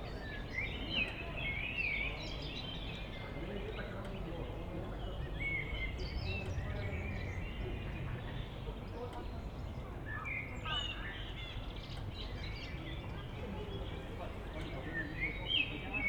Maribor, Mariborski Otok - swimming bath
the bath is still closed at end of may, which means it's open for promenades. no water in the bassins, which adds a slight reverb to the whole place, at whitsunday afternoon.
(SD702, AT BP4025)
Maribor, Slovenia